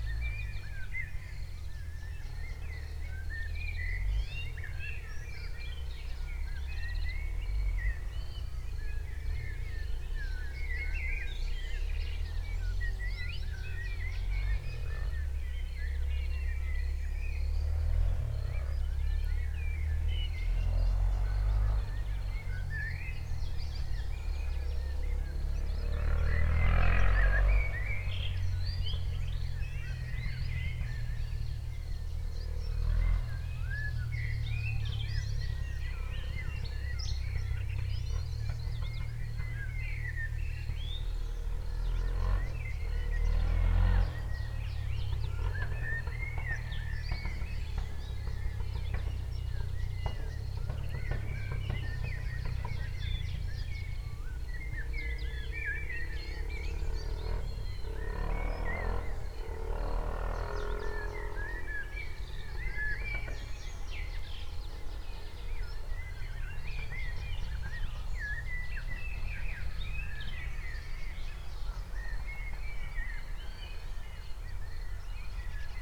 Barlovento, Spanien - Birds, Insects and a Quad
Birds, Insects and a Quad
A vibrant nature environment with lots of birds and insects is used as a playground for some individual fun.